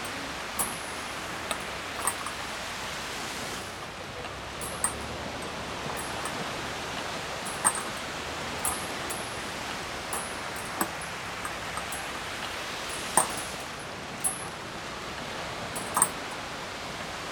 Pirita Beach Tallinn, swings and sea noise
recording from the Sonic Surveys of Tallinn workshop, May 2010